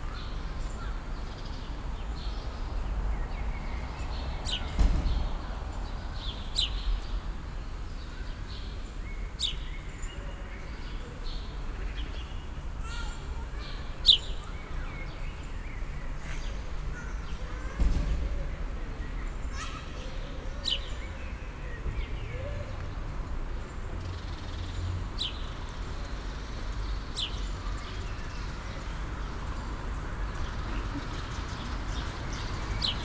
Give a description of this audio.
emserstr, corner kirchhofstr, sunday afternoon, nothing special happens. (Sony PCM D50, DPA4060)